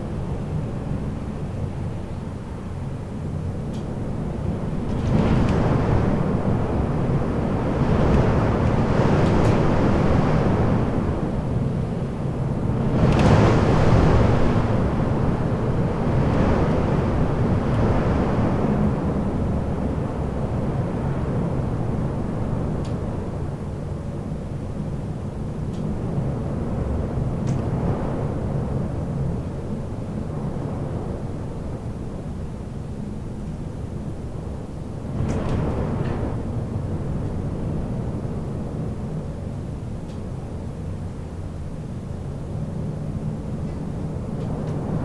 Lihuania, Utena, storm behind the balcony window
night. I awoke. there was snowy storm outside